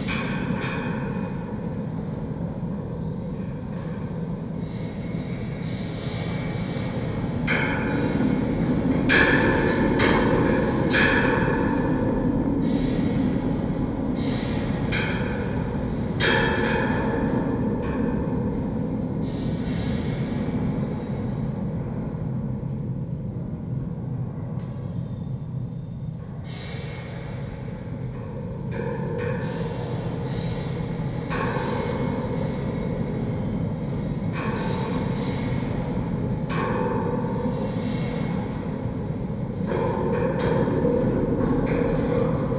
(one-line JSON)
{"title": "construction fence in the wind, Vienna", "date": "2011-08-10 11:50:00", "description": "contact mics on a construction fence", "latitude": "48.22", "longitude": "16.40", "altitude": "158", "timezone": "Europe/Vienna"}